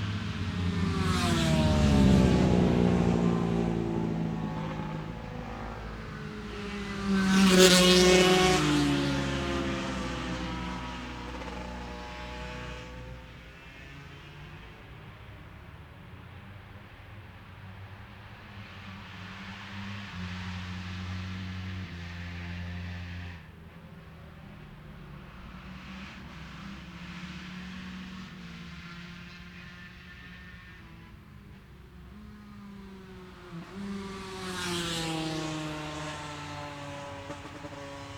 Scarborough UK - Scarborough Road Races 2017 ... lightweights ...
Cock o' the North Road Races ... Oliver's Mount ... Ultra lightweight / Lightweight motorbike practice ...